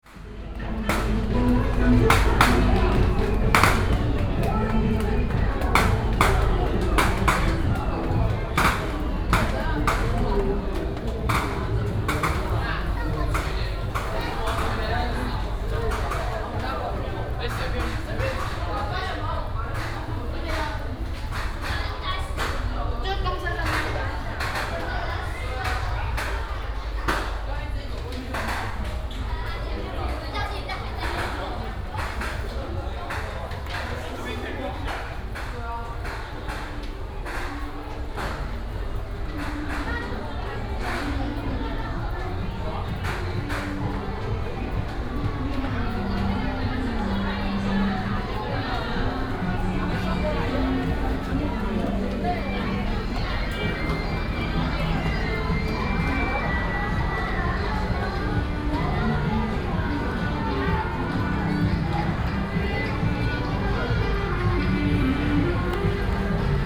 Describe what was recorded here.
Festivals, Walking on the road, Electronic firecrackers, Walk into the underground passage